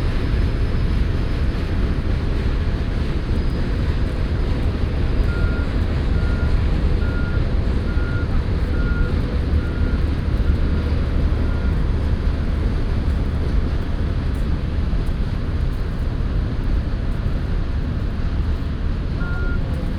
Morasko, field road near train tracks - power generators
(binaural) recorded a few meters away from two commercial power generators. sounds of the nearby sewage system construction site.